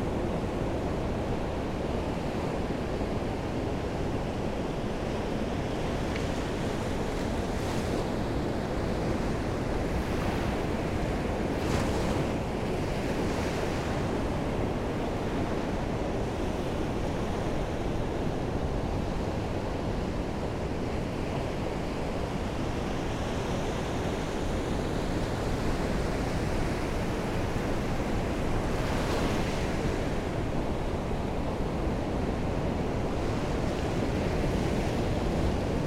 Benicasim, Castellón, España - Voramar Beach - Hotel
Voramar beach, in front of the Hotel Voramar. Rode nt-5 (omni) + mixpre + Tascam dr-680, DIY Jecklin disk